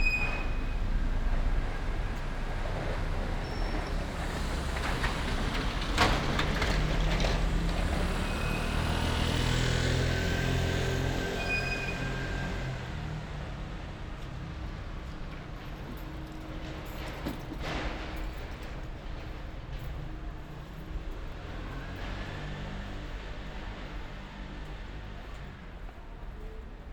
Ascolto il tuo cuore, città. I listen to your heart, city. Chapter LXVIII - Walk in reopened Valentino park in the time of COVID19: soundwalk
"Walk in reopened Valentino park in the time of COVID19": soundwalk
Chapter LXVIII of Ascolto il tuo cuore, città. I listen to your heart, city
Wednesday May 6th 2020. San Salvario district Turin, to reopened Valentino park and back, fifty seven days (but thid day of Phase 2) of emergency disposition due to the epidemic of COVID19
Start at 4:39 p.m. end at 5:36 p.m. duration of recording 56’’40”
The entire path is associated with a synchronized GPS track recorded in the (kmz, kml, gpx) files downloadable here: